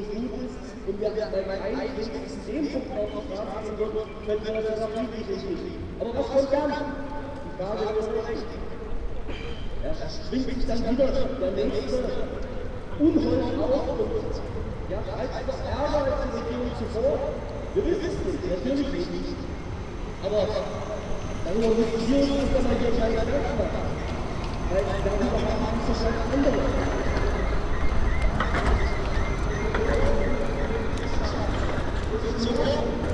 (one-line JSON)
{"title": "Marktplatz, Halle (Saale), Deutschland - echos of a Monday demonstration", "date": "2016-10-24 19:45:00", "description": "Sound of a right wing party gathering (Montagsdemo) on Marktplatz, Halle. Only a few people are there, and what they say is hardly to understand because of the great echos between the church and surrounding houses. Trams also disturb their speeches periodically. It's cold and it rains\n(Sony PCM D50, Primo EM172)", "latitude": "51.48", "longitude": "11.97", "altitude": "90", "timezone": "Europe/Berlin"}